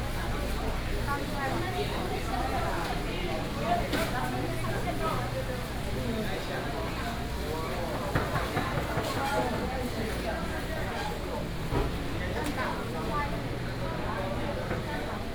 太平市場, Taichung City - Public retail market
Walking in the Public retail market, vendors peddling, Binaural recordings, Sony PCM D100+ Soundman OKM II